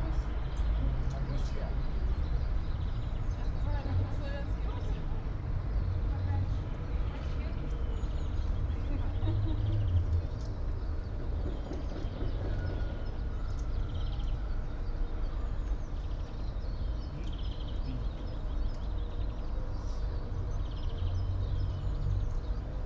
all the mornings of the ... - mar 20 2013 wed